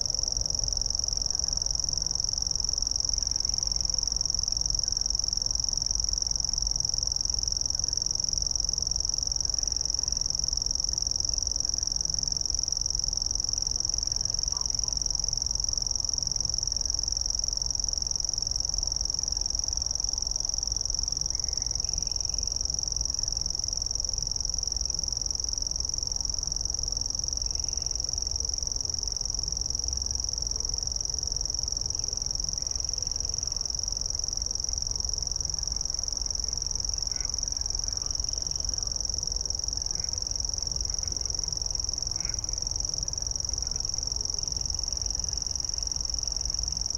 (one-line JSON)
{"title": "Großer Zingerteich, Blankenfelde, Berlin, Deutschland - Zwischen Kleiner und Großer Zingerteich, 23 Uhr", "date": "2022-05-15 23:00:00", "description": "Zwischen Kleiner und Großer Zingerteich", "latitude": "52.61", "longitude": "13.39", "altitude": "44", "timezone": "Europe/Berlin"}